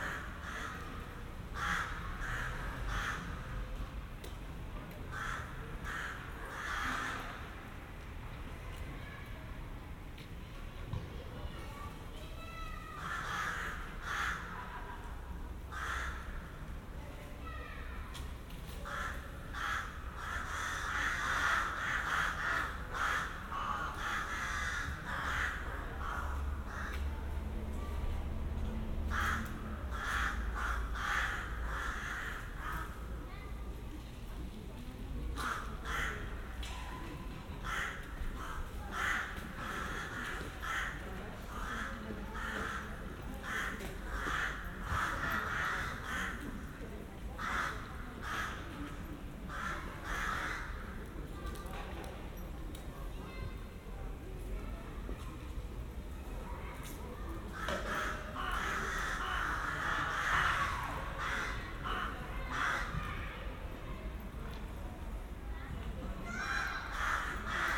Place dAusterlitz, Strasbourg, Frankreich - evening ambiance
evening atmosphere at lockdown. ravens and playing children. cars now and then.
sennheiser AMBEO smart headset